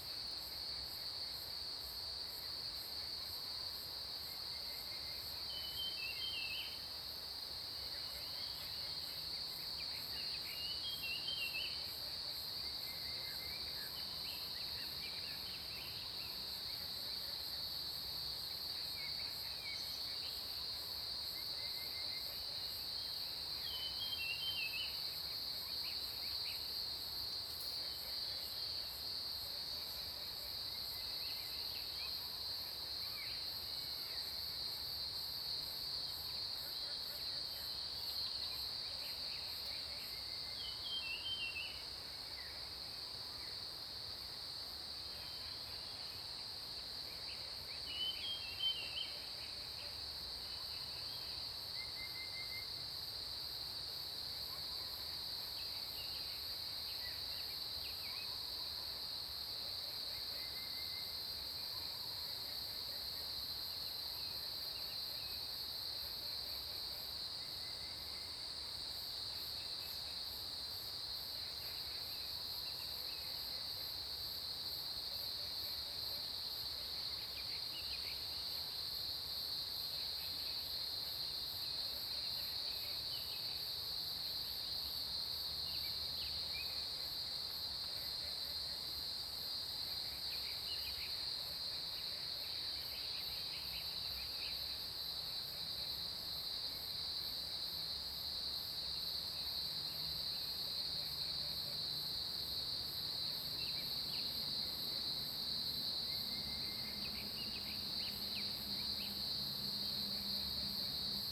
Zhonggua Rd., 桃米里, Puli Township, Taiwan - Cicada and Bird sounds
Cicada sounds, Bird calls, Frog chirping, Early morning
Zoom H2n MS+XY